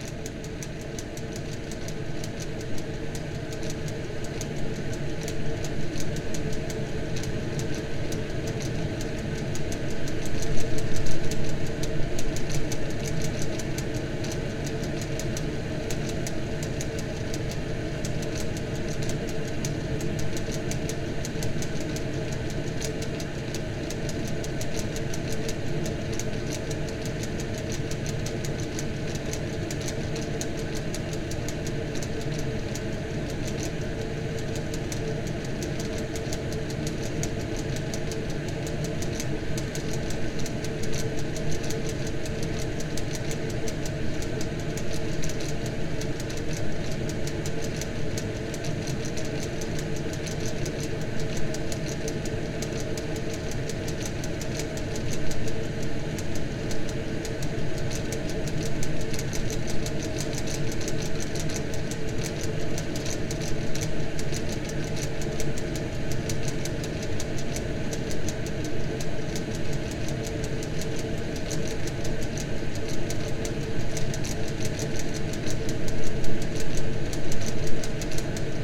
Kelmė, Lithuania, ventilation
local cultural center: ventilation hole
Kelmės rajono savivaldybė, Šiaulių apskritis, Lietuva